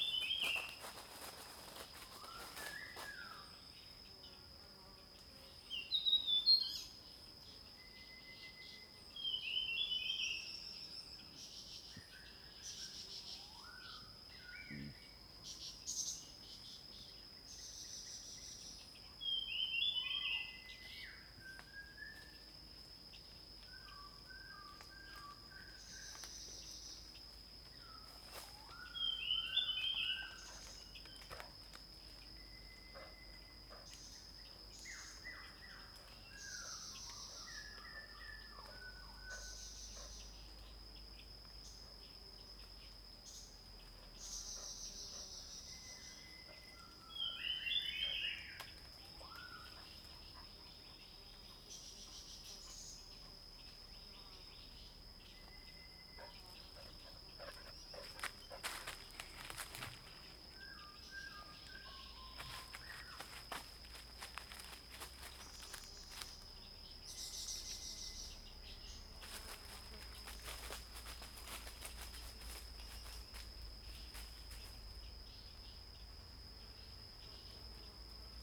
Hualong Ln., Yuchi Township, 南投縣 - Bird calls
Birds singing, face the woods, Dog
Zoom H2n MS+ XY
Puli Township, 華龍巷164號